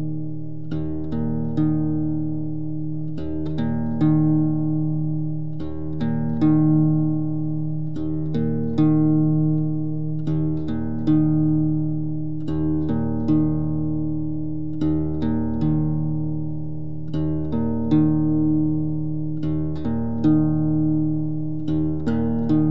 kasinsky 'Hai chiuso la porta della cucina?' - kasinsky "Hai chiuso la porta della cucina?